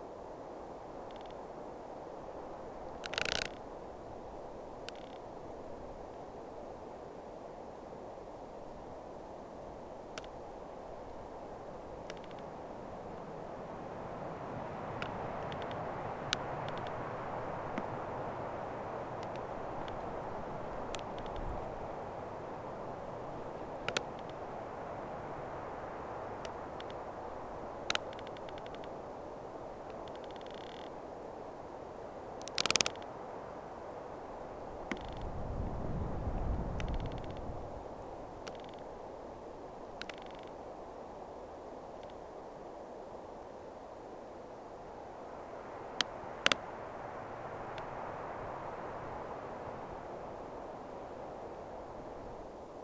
Hures-la-Parade, France - Firs
Into the wind, the firs trunks are cracking up.